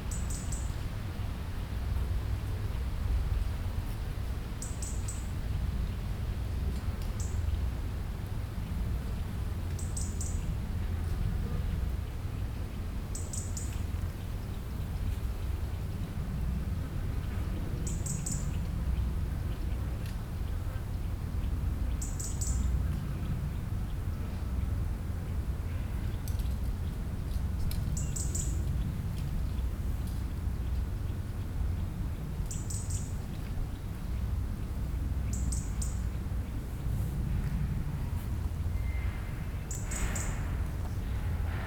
Heessener Wald, Hamm, Germany - in the forest under heat wave
Resting on a bench in the forest. The huge building site at the clinic can be heard. Above the tree tops a burning heat of some 40 degrees. Down here it’s quite pleasant. Yet yellow leaves are dropping all around as if it was autumn...